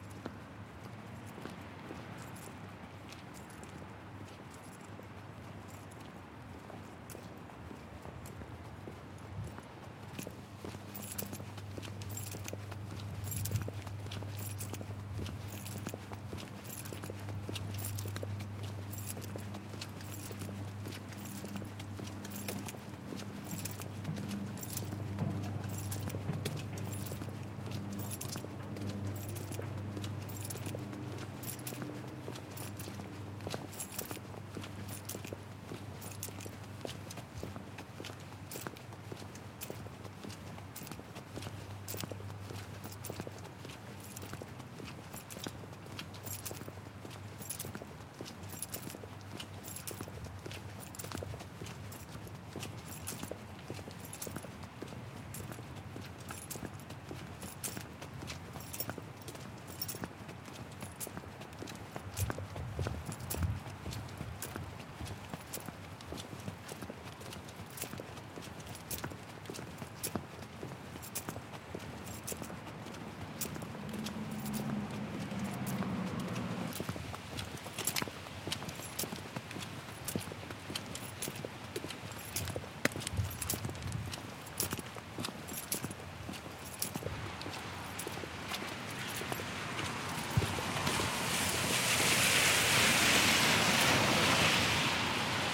Walking around with my recorder